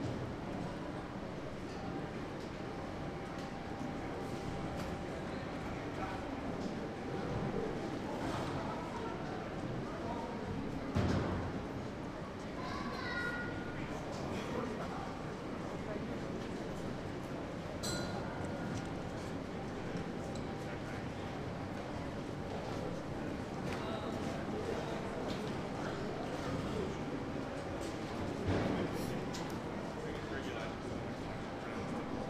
Heiligenstadt, Wien, Österreich - Sushi Takeaway
Sushi-Takeaway in der Wartehalle des Heilgenstadt Bahnhofs.